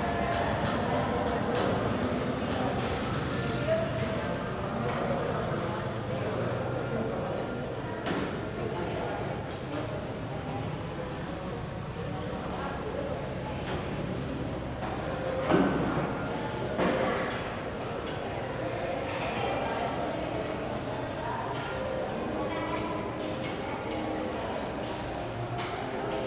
Maxcanú - Mexique
Ambiance sonore à l'intérieur du marché couvert
Maxcanú, Yucatán, México, 21 October 2021